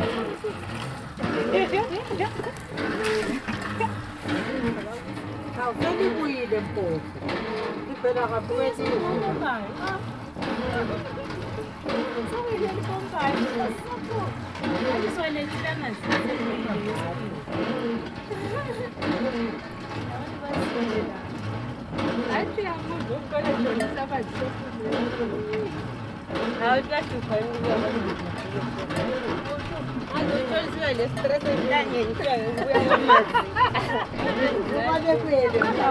Lupane, Zimbabwe - At the borehole…
On the way, we are passing a few homesteads, getting drawn into conversations, and then joined on the way to the borehole, where some other women from the village are already busy pumping... It’s a “heavy borehole” the women say, the water only comes slowly, reluctantly and after much labour of four women pumping…